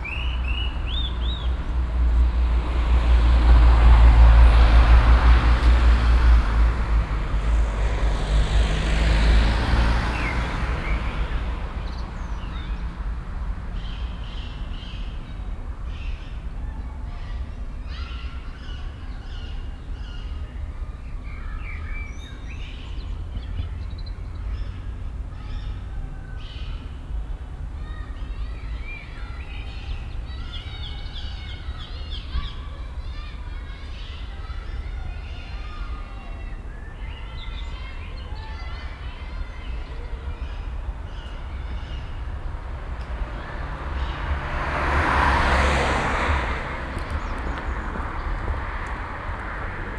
cologne, stadtgarten, parkeingang spichernstrasse
stereofeldaufnahmen im juni 2008 mittags
parkatmo mit fussballspielenden kindern, fussgänger und strassenverkehr spichernstrasse
project: klang raum garten/ sound in public spaces - in & outdoor nearfield recordings